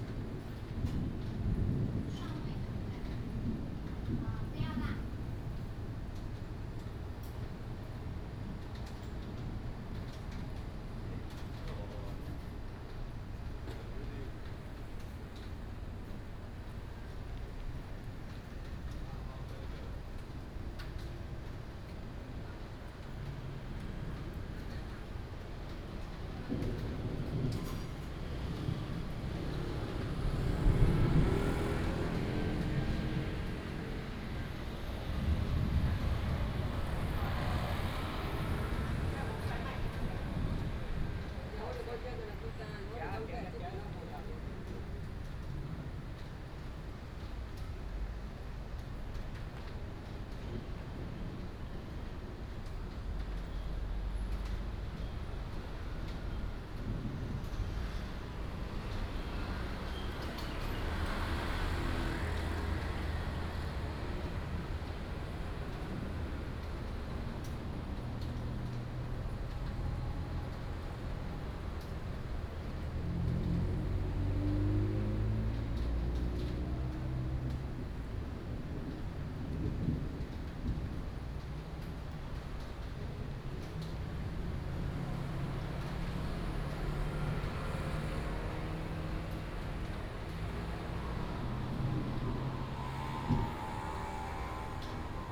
Bitan Rd., Xindian Dist., New Taipei City - in front of the store
In the street, in front of the store, Thunder, Traffic Sound
28 July 2015, 15:10